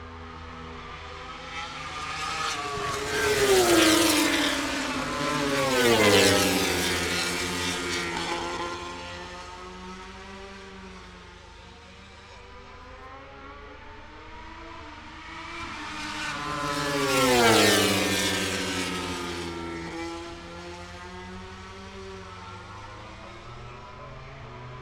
Lillingstone Dayrell with Luffield Abbey, UK - British Motorcycle Grand Prix 2016 ... mot grand prix ...
Moto grand Prix ... Free practice one ... International Pit Straight ... Silverstone ... open lavaliers on T bar ...
Towcester, UK